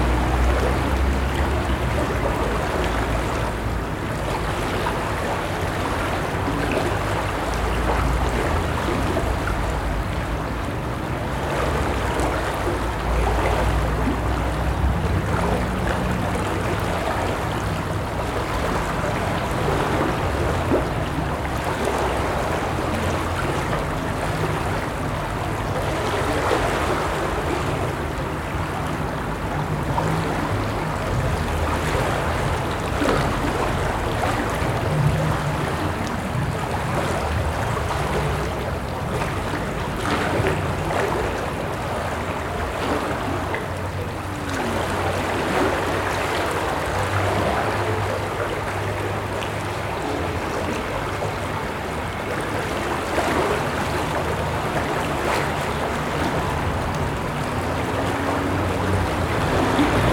Allée de Barcelone, Toulouse, France - water Sound Lock Boat
water Sound, Lock, trafic Sound road